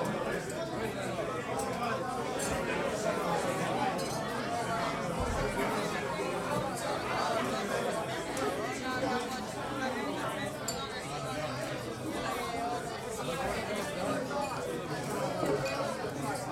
Rijeka, Jazz Tunel, otvorenje 09052008
Primorsko-Goranska županija, Hrvatska, May 2010